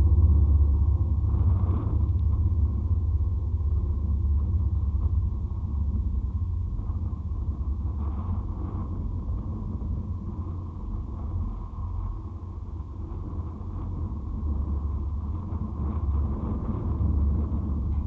Mont-Saint-Guibert, Belgique - Architectural cables
This building is decorated with a strange external structure, made of cables. This is a recording of the wind onto the cables, with a contact microphone, and making nothing else. The wind produces strange drones.
10 July 2016, 16:30